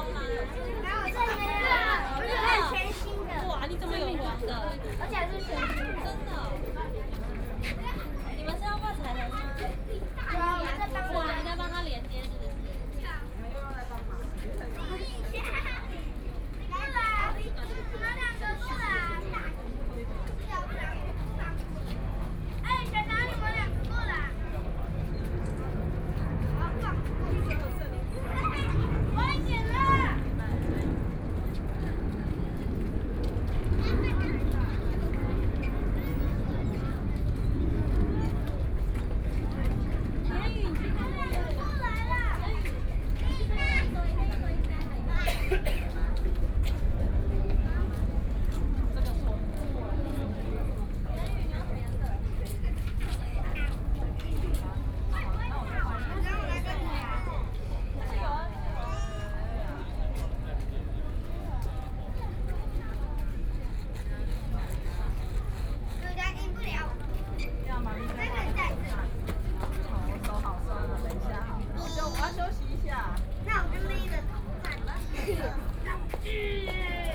碧湖公園, Taipei City - children on the floor painting
Community Activities, Many children on the floor painting, Aircraft flying through